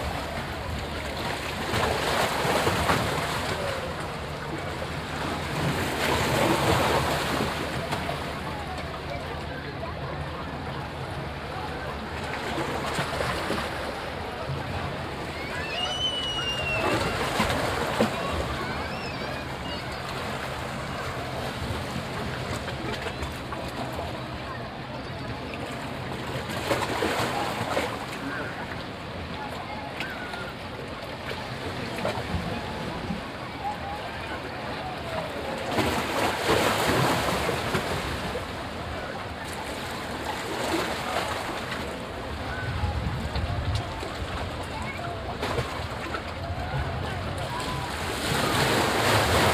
sainte croix, cavern, sea waves, beach
Close to the sea at a small avern on a hot and mellow windy summer day. The sounds of the waves and the visitors of the nearby beach.
international sound ambiences and topographic field recordings